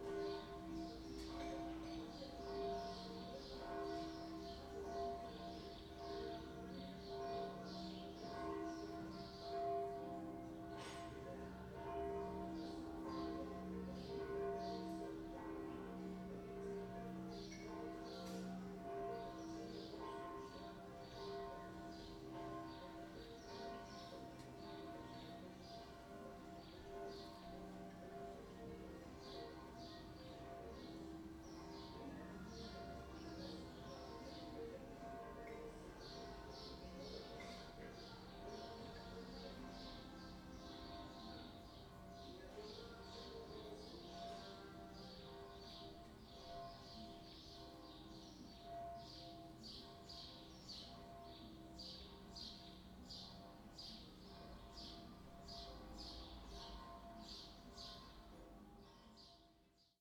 warm and light summer morning. church bells sound changing with the wind.